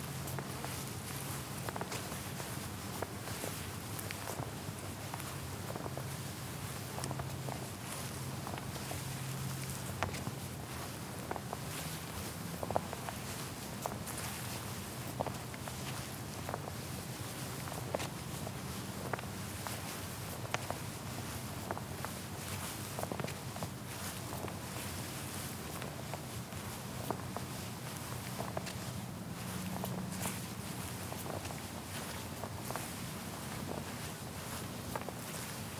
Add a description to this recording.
Walking meditation around the churchyard of Lancaster Priory Church. Recorded on a Tascam DR-40 using the on-board microphones (coincident pair) and windshield.